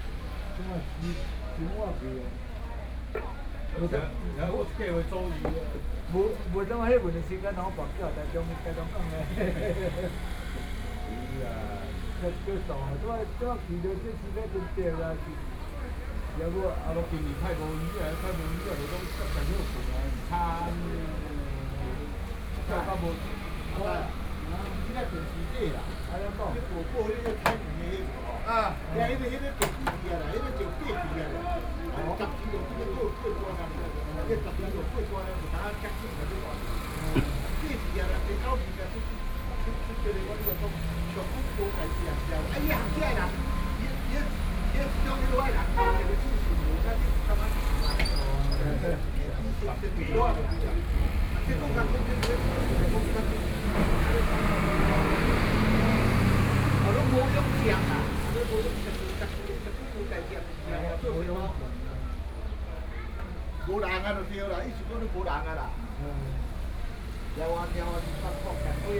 Nanfang-ao, Su'ao Township - Chat
A group of taxi drivers chatting and playing chess, There are close to selling fish sounds, Binaural recordings, Zoom H4n+ Soundman OKM II
Suao Township, Yilan County, Taiwan, November 2013